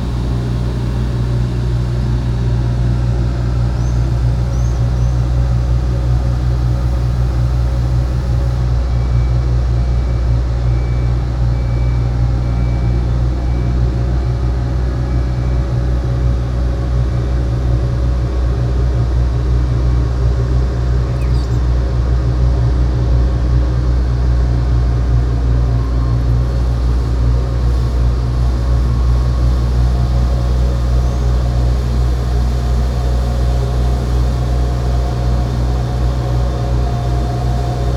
all the mornings of the ... - may 13 2013 mon